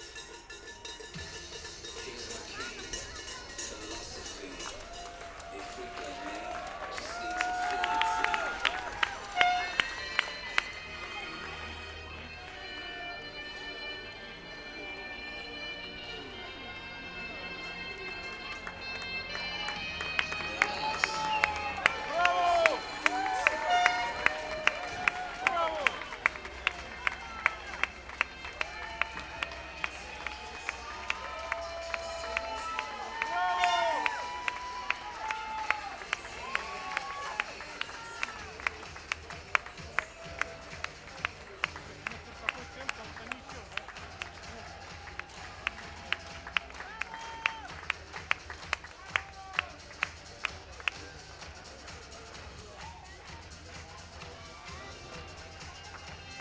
IronMan Frankfurt 2014
Marathon Finish Line - Cheers, cowbells and celebrations during the athletes arrival
Zoom H6 with SGH-6 Mic with wind muffler.